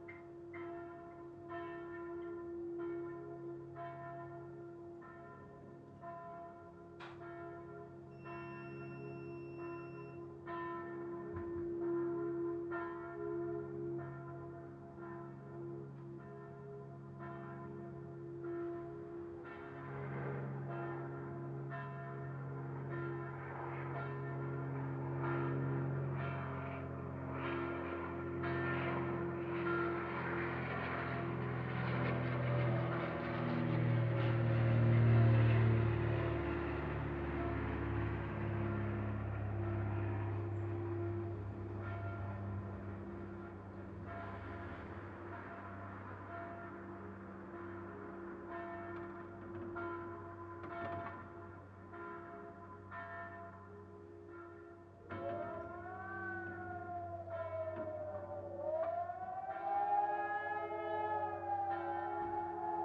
clash of sounds, church bells tolling, my washing machine beeps the end of a spin, low flying propeller aircraft, and the siren test
recorded on a Zoom H5